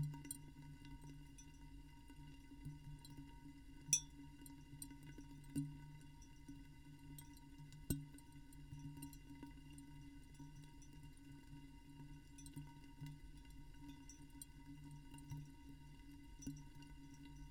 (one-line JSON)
{"title": "Utena, Lithuania, rain in the bottles", "date": "2017-09-17 17:30:00", "description": "two small omnis in two empty bottles...rain is starting...", "latitude": "55.53", "longitude": "25.59", "altitude": "110", "timezone": "Europe/Vilnius"}